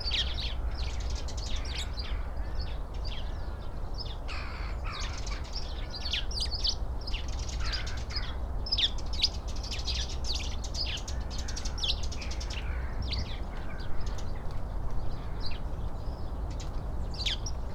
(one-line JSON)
{"title": "Visitor Centre, Cliff Ln, Bridlington, UK - tree sparrow soundscape ...", "date": "2019-12-13 07:50:00", "description": "tree sparrow soundscape ... SASS ... flock of birds in bushes near the reception area of RSPB Bempton Cliffs ... upto 40 birds at any one time ... bird calls from ... jackdaw ... blackbird ... crow ... herring gull ... goldfinch ... robin ... blue tit ... magpie ... pheasant ... dunnock ... pied wagtail ...", "latitude": "54.15", "longitude": "-0.17", "altitude": "92", "timezone": "Europe/London"}